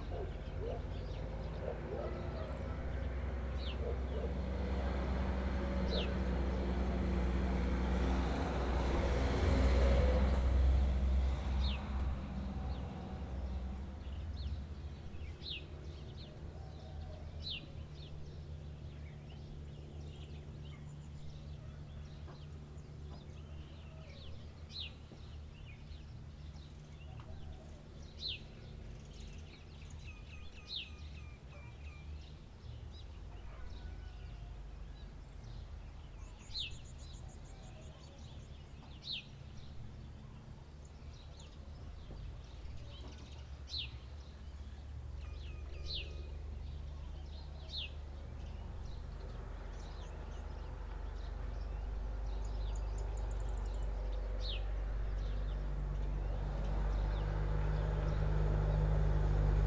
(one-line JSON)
{"title": "Rue Leconte De Lisle, Réunion - TOURISME À LA RÉUNION.", "date": "2020-02-16 07:34:00", "description": "48 HÉLICOPTÈRES ET 16 ULM CE MATIN.\nVoir aussi", "latitude": "-21.14", "longitude": "55.47", "altitude": "1182", "timezone": "Indian/Reunion"}